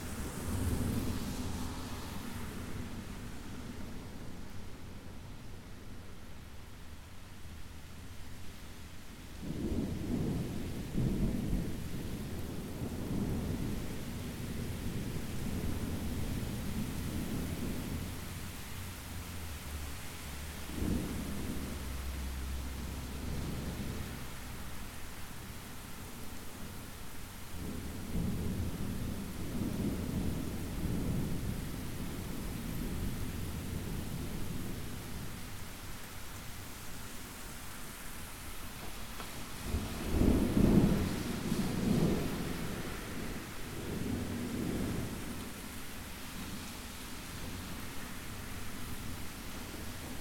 Eastside, Milwaukee, WI, USA - thunderstorm
Rainy, windy, rolling thunder, much traffic on wet pavement.